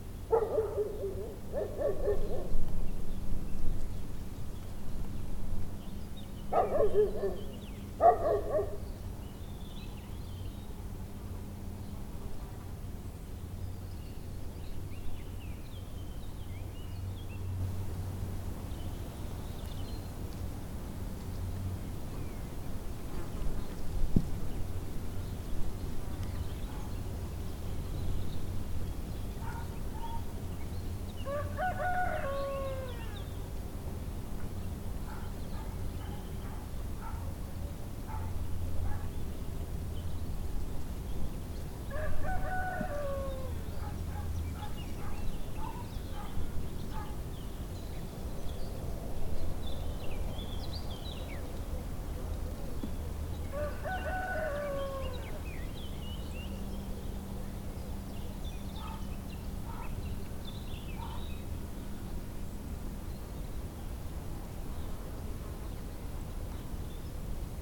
{"title": "Monferran-Savès, France - Lockdown 1 km - noon - angelus rings (South)", "date": "2020-04-04 12:05:00", "description": "Recorded during first lockdown, south of the village.\nZoom H6 capsule xy.\nsun and puddles.", "latitude": "43.59", "longitude": "0.98", "altitude": "175", "timezone": "Europe/Paris"}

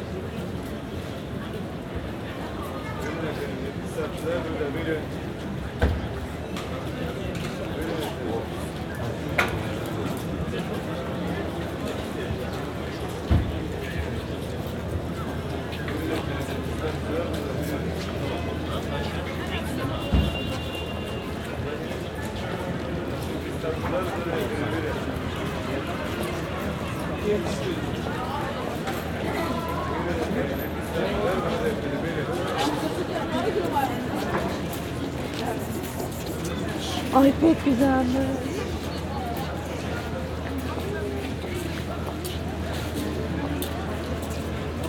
{"title": "Istanbul Soundscape, Sunday 13:05 Galata Tower - Istanbul Soundscape, Sunday 16:55 Galata Tower", "date": "2010-02-14 23:23:00", "description": "Istanbul ambient soundscape on a Sunday afternoon at the Galata Tower plaza, binaural recording", "latitude": "41.03", "longitude": "28.97", "altitude": "54", "timezone": "Europe/Tallinn"}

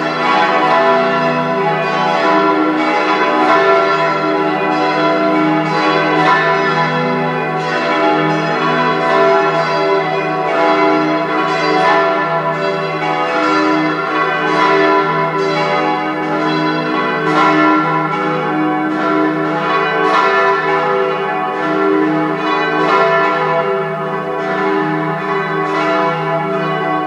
{"title": "church bells, Salzburg, Austria - church bells", "date": "2012-11-13 12:19:00", "description": "firstly church bells from surrounding churches ring followed by the church bell of the dome", "latitude": "47.80", "longitude": "13.04", "altitude": "432", "timezone": "Europe/Vienna"}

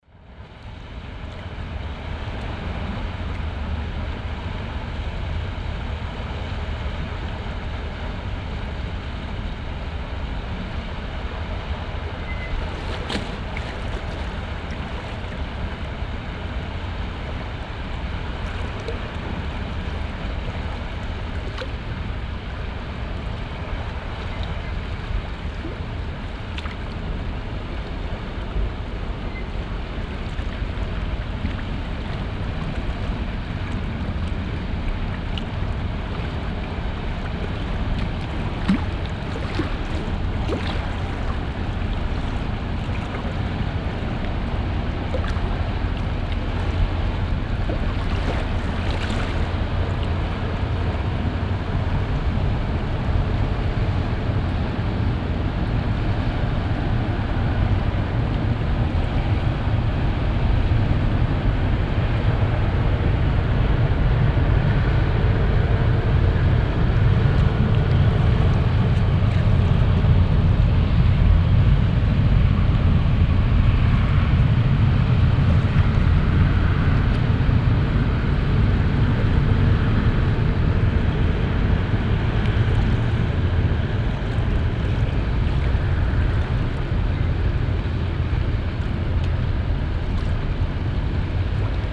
{"title": "monheim, rheindeich, zwei schiffe", "description": "vorbeifahrt zweier rheinschiffe bei hochwasser morgens\nsoundmap nrw:\nsocial ambiences, topographic field recordings", "latitude": "51.10", "longitude": "6.88", "altitude": "31", "timezone": "GMT+1"}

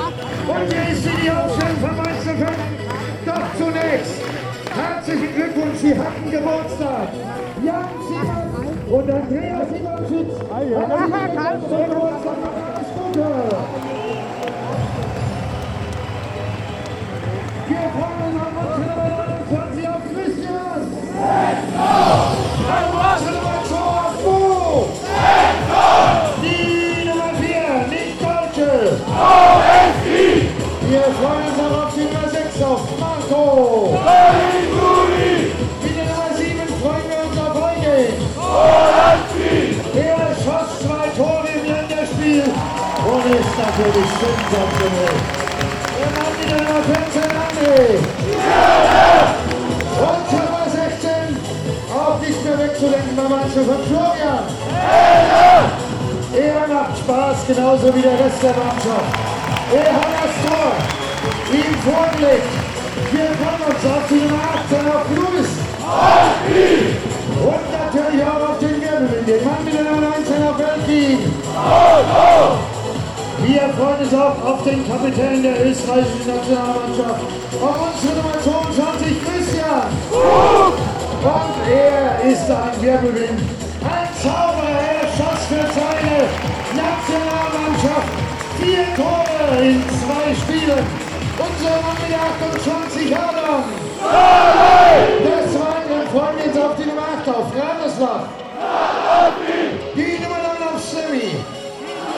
{"title": "mainz: stadion am bruchweg - the city, the country & me: football stadium of fsv mainz 05, south stands", "date": "2010-10-16 15:16:00", "description": "before the football match mainz 05 - hamburger sv, footbal fans of mainz 05, stadium commentator introducing the teams of hamburger sv and mainz 05\nthe city, the country & me: october 16, 2010", "latitude": "50.00", "longitude": "8.25", "altitude": "120", "timezone": "Europe/Berlin"}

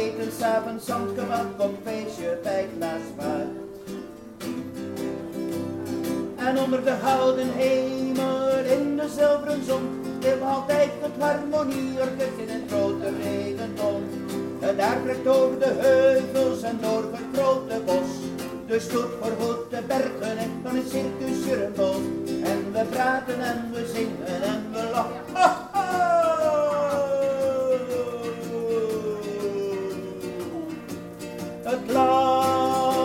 {"title": "Frankrijklei, Antwerpen, Belgique - Musicien de rue - Street musician", "date": "2018-09-12 16:00:00", "description": "dans les couloirs souterrains du tram\nin the underground corridors of the tram", "latitude": "51.22", "longitude": "4.42", "altitude": "6", "timezone": "Europe/Brussels"}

